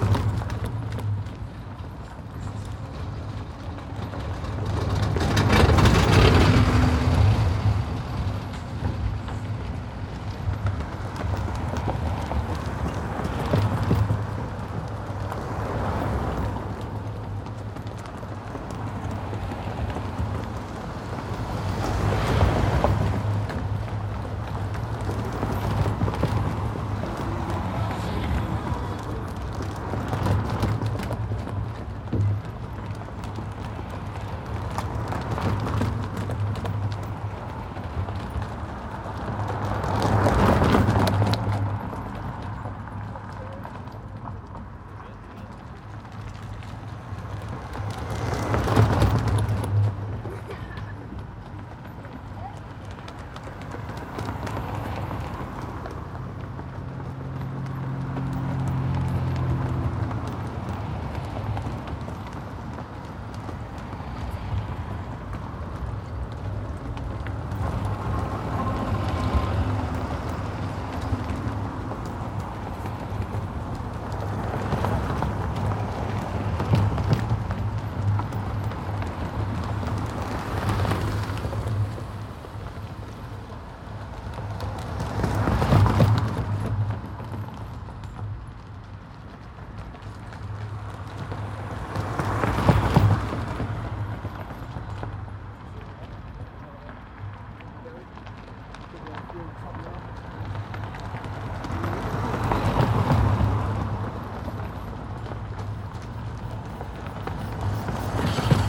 Hammersmith Bridge, London - Hammersmith Bridge in London
Interesting sounds that resemble horse clapping. Hammersmith Bridge has a very old surface made of metal slabs covered with a thin layer of tarmac. SONY PCM D100. Little EQ (HPF below 40hz) to cut the wind noise.